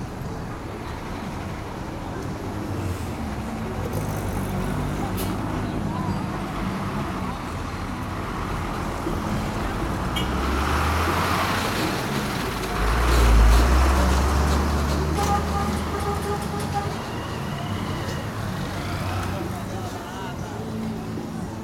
R. Prisco Paraíso, Cachoeira - BA, 44300-000, Brasil - Caixas de som e carros, dia de feira - Speakers and cars, market day
Dia de feira, movimento no centro de Cachoeira.
Market day, movement in the center of Cachoeira city.
Recorded with: int. mic of Tascam dr100